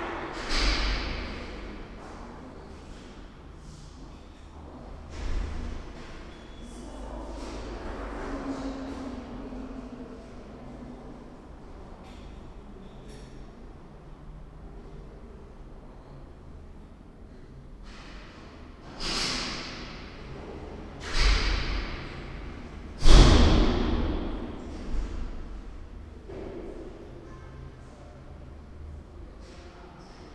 berlin, sonnenallee: agentur für arbeit berlin süd - the city, the country & me: employment agency
stairwell of employment agency
the city, the country & me: august 31, 2010
Berlin, Germany, August 2010